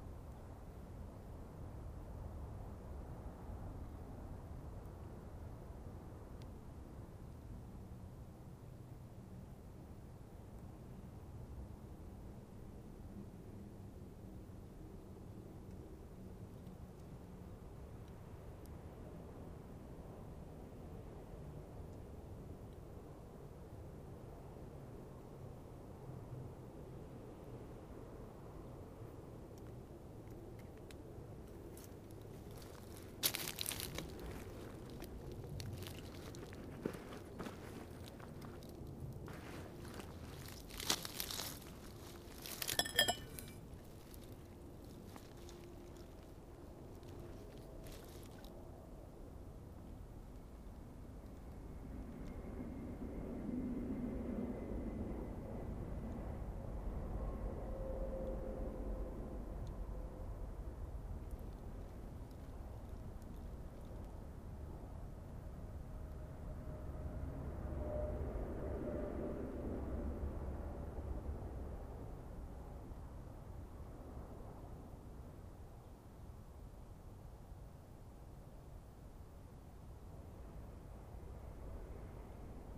two men from the road work crew are talking about the temporary toilets at the job site, we're about halfway then... soon we dip off the road and through the trees, out into the hot summer sun, across the tracks and find a nice shady spot under a big ponderosa to hang out for a bit...
28 June 2018, 13:50